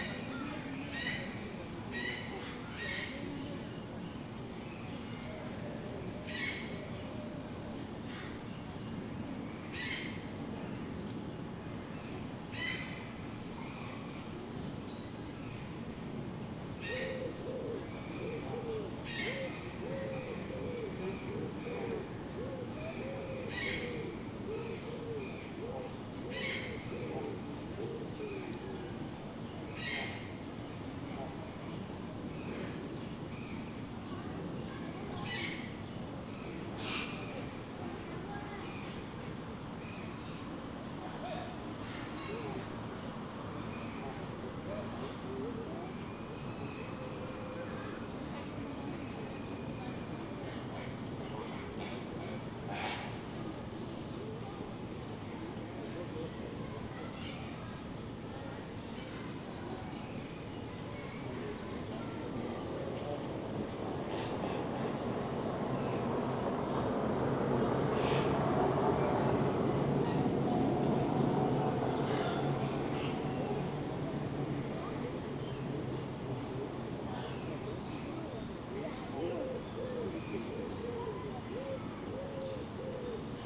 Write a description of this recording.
General atmosphere in Burgers' Zoo, Arnhem. Recorded with my Zoom's internal mics near the Flamingo pond.